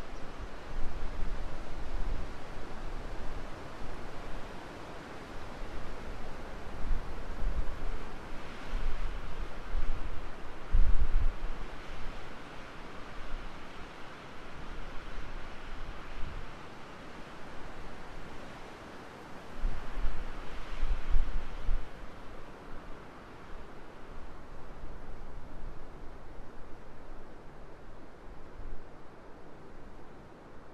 cilaos, ile de la reunion
vent dans conniferes